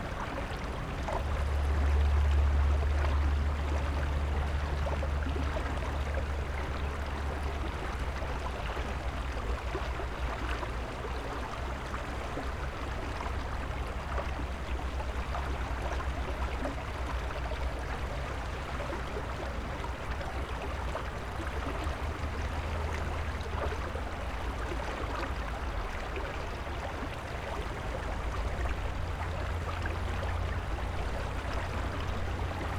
{"title": "Utena, Lithuania, urban river", "date": "2013-07-18 13:54:00", "description": "there's a river under the street", "latitude": "55.51", "longitude": "25.60", "altitude": "105", "timezone": "Europe/Vilnius"}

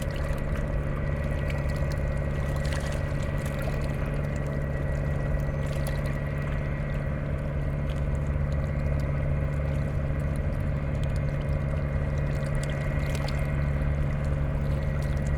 {"title": "river Rhein, Köln - ambience, ship departs, drone", "date": "2013-08-13 19:35:00", "description": "Köln, river Rhein, ambience at the river bank, freighter departs from the opposite landing stage\n(Sony PCM D50, DPA4060)", "latitude": "50.92", "longitude": "6.98", "altitude": "37", "timezone": "Europe/Berlin"}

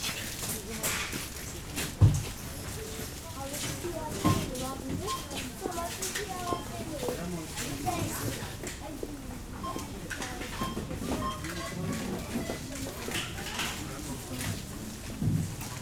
{"title": "berlin, maybachufer: supermarket - the city, the country & me: inside the supermarket", "date": "2014-01-17 16:51:00", "description": "inside the new supermarket, people looking for special offers\nthe city, the country & me: january 17, 2014", "latitude": "52.49", "longitude": "13.43", "timezone": "Europe/Berlin"}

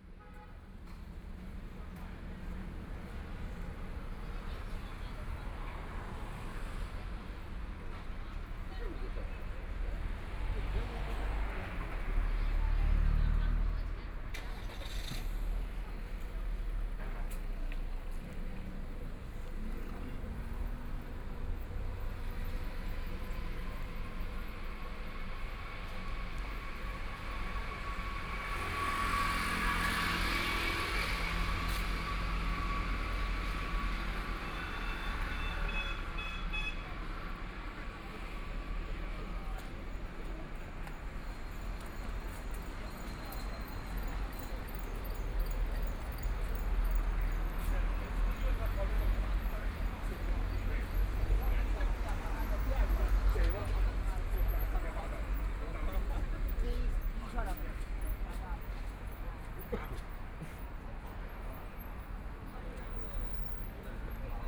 {"title": "Pudong New Area, China - soundwalk", "date": "2013-11-21 12:05:00", "description": "Away from the main road into the community of small streets, Walk through the school next\nCommunities, small market, Binaural recording, Zoom H6+ Soundman OKM II", "latitude": "31.23", "longitude": "121.52", "altitude": "18", "timezone": "Asia/Shanghai"}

